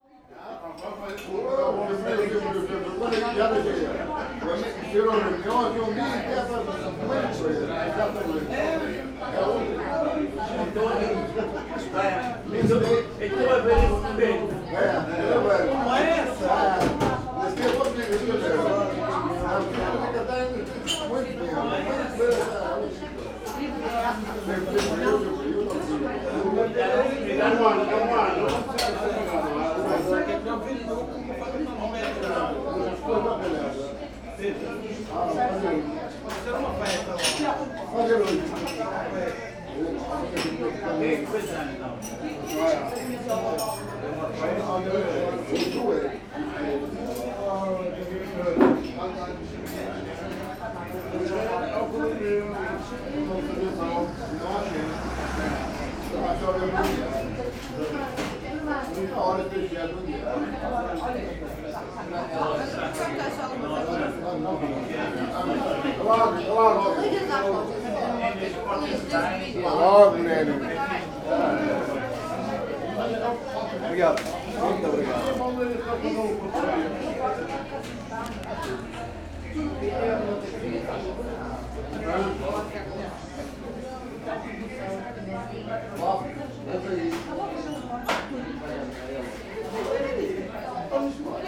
Funchal, rua do Seminario - snack bar atoca
having coffee at the atoca snack bar in one of the back streets of Funchal.